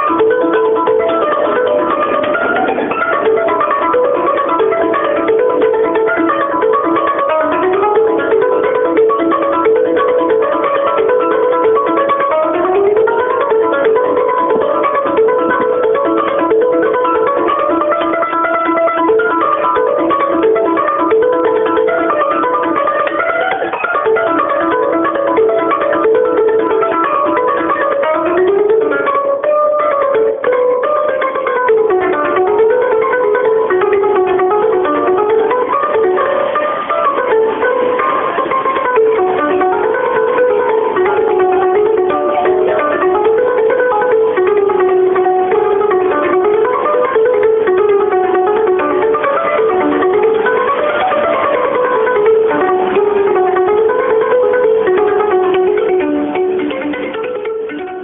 Zitherspieler Rathaus Neukölln
Zitherspieler, playing his instrument like crazy, in front of Rathaus Neukölln. recorded with the mobile phone.